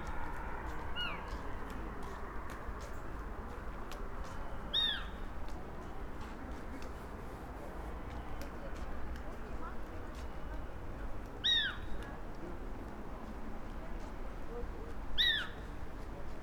cemetery, novigrad, croatia - sounds at night with small owl
singing from afar, people, steps, cat ...